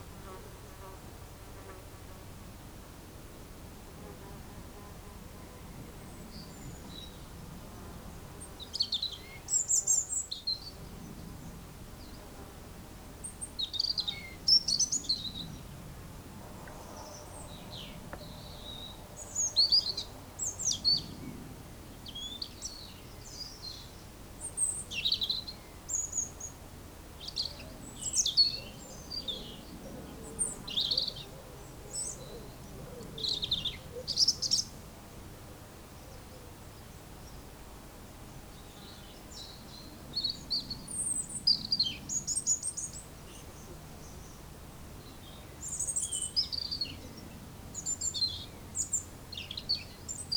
Tournedos-sur-Seine, France - Warbler
In a very quiet ambience, a warbler is singing and a dog is passing by on the pathway.